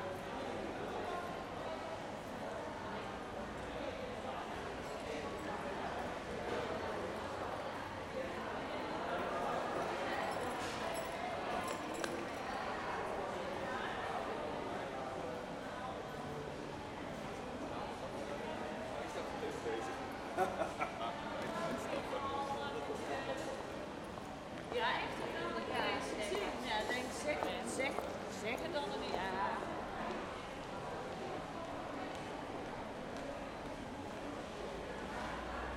Hoog-Catharijne CS en Leidseveer, Utrecht, Niederlande - entrance "hello city"
the entrance of the shopping mall build in the 1970ies from the main station, recorded next to a plant
Utrecht, The Netherlands, 7 May 2012, 14:30